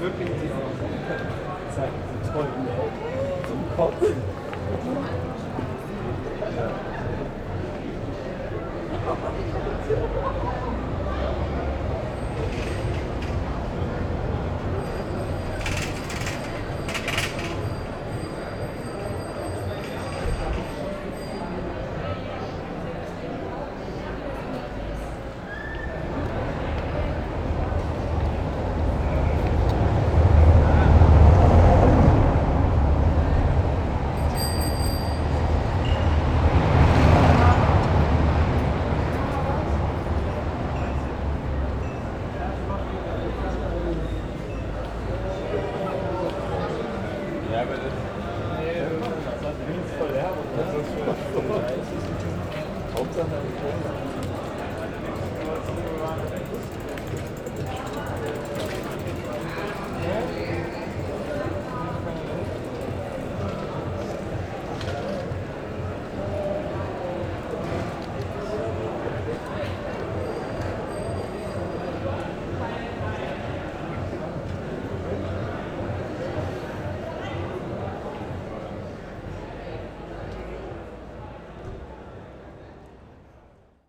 people partying on the street during the opening of a new bar
the city, the country & me: june 27, 2010